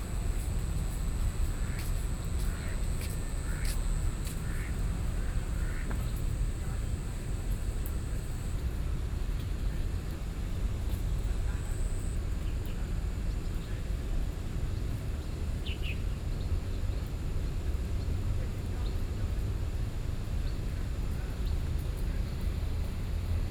Taipei Botanical Garden, Taiwan - In the Park
In the Park, Sony PCM D50 + Soundman OKM II
台北市 (Taipei City), 中華民國